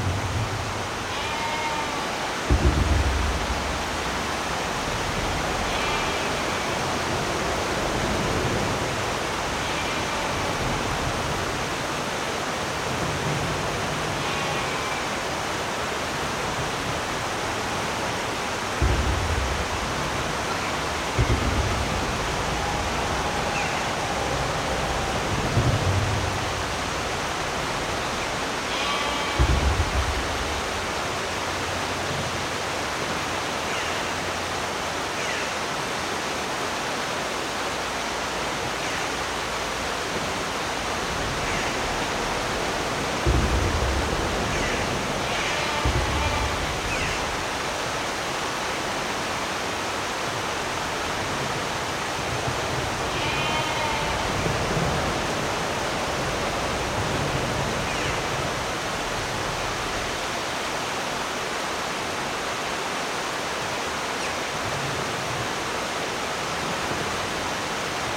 Penrith, UK - Under the M6 at Low Borrow Bridge, Tebay
Traffic overhead on the busy M6 motorway with drips falling down, Borrow beck flowing nearby, sheep under the motorway and Jackdaws nesting above.
16 May 2022, ~10:00